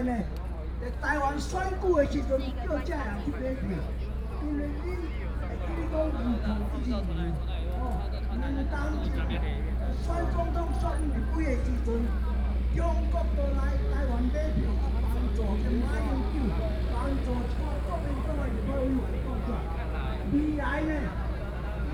Legislative Yuan, Taipei City - Occupy Taiwan Legislature
Occupy Taiwan Legislature, Walking through the site in protest, Traffic Sound, People and students occupied the Legislature
Binaural recordings